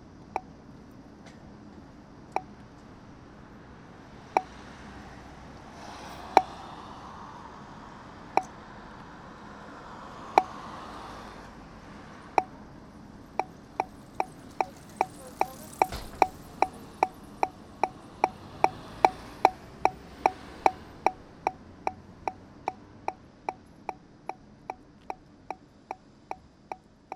Frederiksberg, Denmark - Red light
A kind of less common red light signal into Copenhagen. It was worth the catch too.
16 April